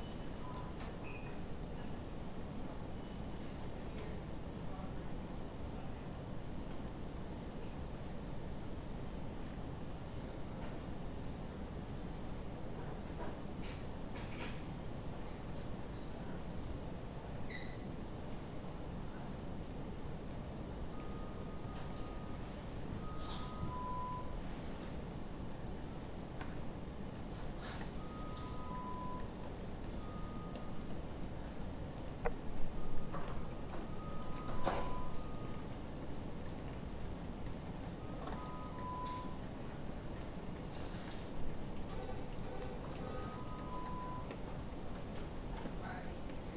Lonely hospital corridor with patients chiming for help, Houston, Texas
Roaming the hallways of Memorial Hermann Hospital after midnight, encountering lone walkers supported by I.V. rigs; doctors and nurses wheeling gurneys along, patients chime for their nurses from their rooms. Elevator doors opening/closing, people talking, shoes squeaking, A/C blowing.
Tascam DR100 MK-2 internal cardioids